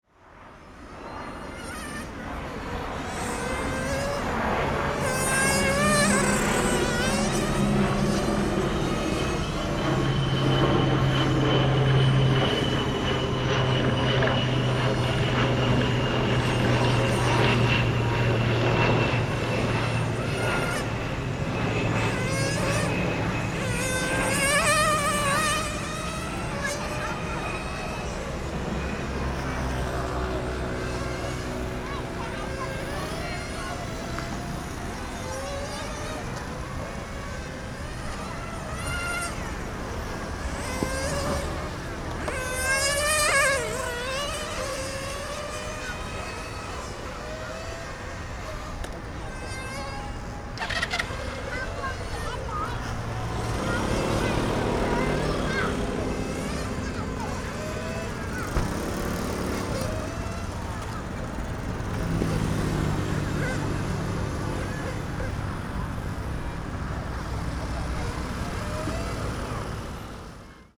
{"title": "New Taipei City, Taiwan - Remote control car", "date": "2012-02-12 16:51:00", "description": "Remote control car sound, Traffic Noise, Aircraft flying through, Rode NT4+Zoom H4n", "latitude": "25.07", "longitude": "121.46", "altitude": "7", "timezone": "Asia/Taipei"}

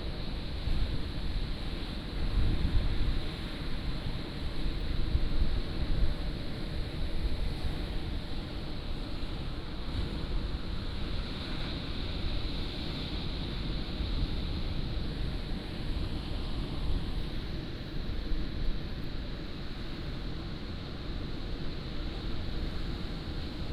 {"title": "坂里村, Beigan Township - Sound of the waves", "date": "2014-10-13 13:28:00", "description": "In a small temple square, Sound of the waves", "latitude": "26.21", "longitude": "119.97", "altitude": "17", "timezone": "Asia/Taipei"}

